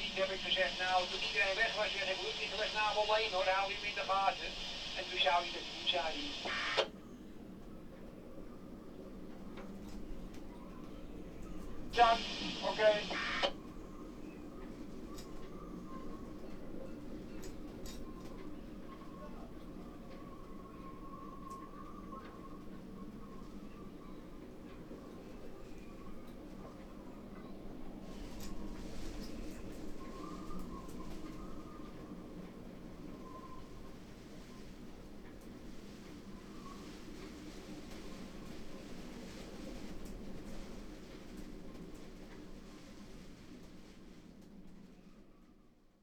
workum, het zool: marina, berth h - the city, the country & me: marina, aboard a sailing yacht
wind flaps the tarp, radio traffic on channel 73
the city, the country & me: july 18, 2009
Workum, The Netherlands